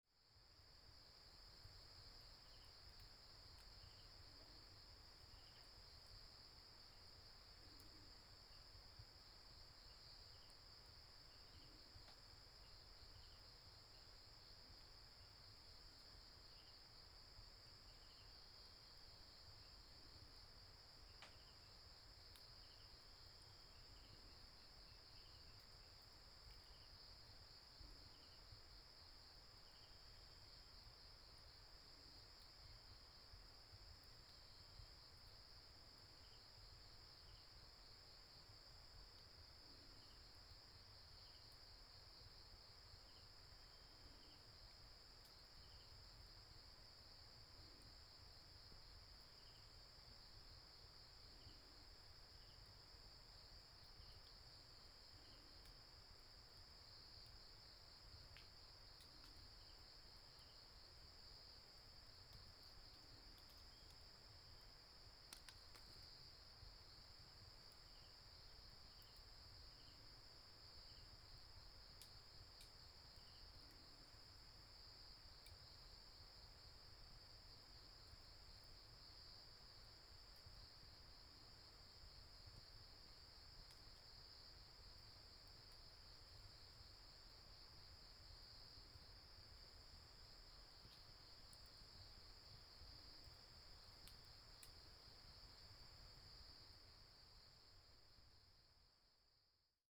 達保農場三區, 土坂, 達仁鄉 - Late night in the mountains
Late night in the mountains, Insect noise, Stream sound